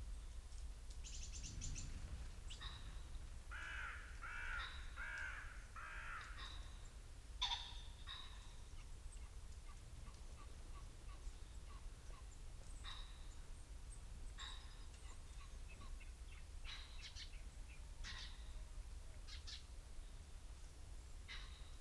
Luttons, UK - Pheasants run through it ...
Soundscape ... the only constant being pheasant calls ... bird calls from ... carrion crow ... blackbird ... dunnock ... robin ... long-tailed tit ... great tit ... wood pigeon ... great tit ... treecreeper ... goldfinch ... binaural dummy head on tripod ... background noise ... traffic ...